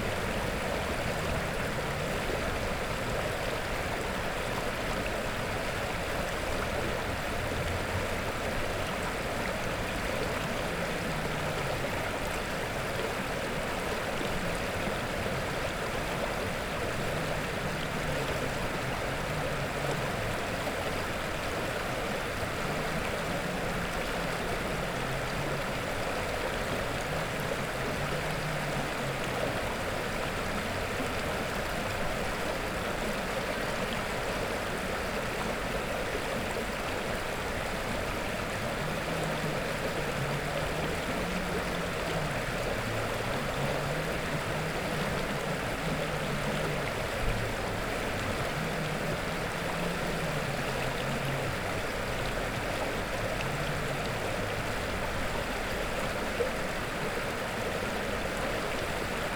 Vyšná Revúca, Liptovské Revúce, Slovakia - Revúca River
Recording of the river Revúca in winter time. Recorded in Liptovské Revúce.